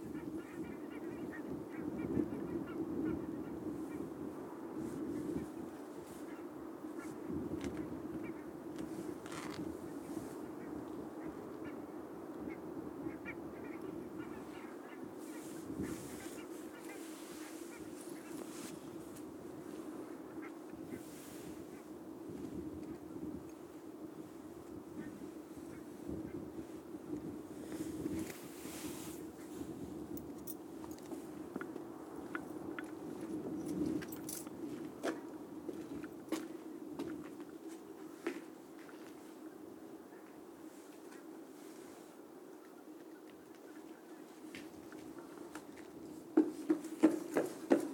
Hattem, The Netherlands - Railwaybridge Zwolle
field recording from the new railway bridge
14 April 2013